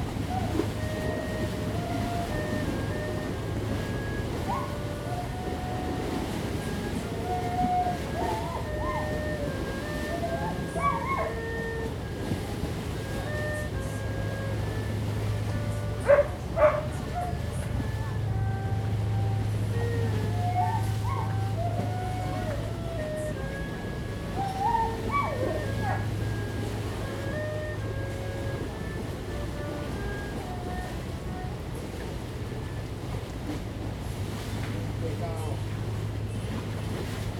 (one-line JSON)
{"title": "Tamsui Dist., New Taipei City - On the river bank", "date": "2015-08-24 16:06:00", "description": "Tide, On the river bank, Erhu, Dogs barking\nZoom H2n MS+XY", "latitude": "25.17", "longitude": "121.43", "timezone": "Asia/Taipei"}